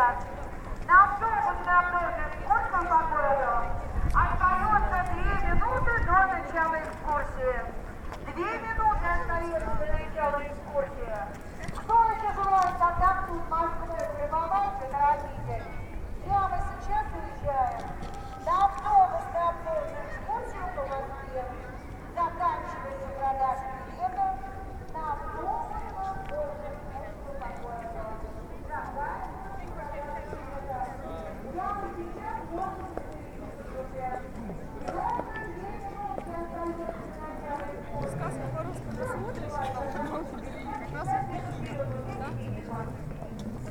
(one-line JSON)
{"title": "Roter Platz - Moskau, Roter Platz", "latitude": "55.75", "longitude": "37.62", "altitude": "152", "timezone": "GMT+1"}